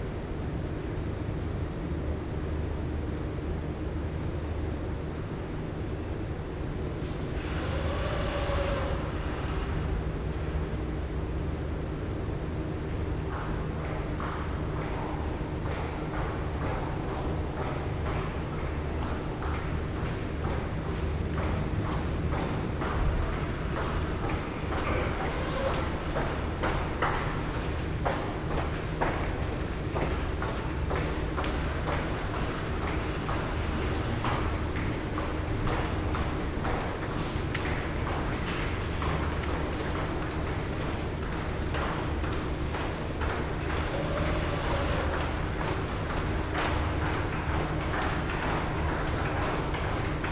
sound of the bridge on the +15 walkway Calgary
Alberta, Canada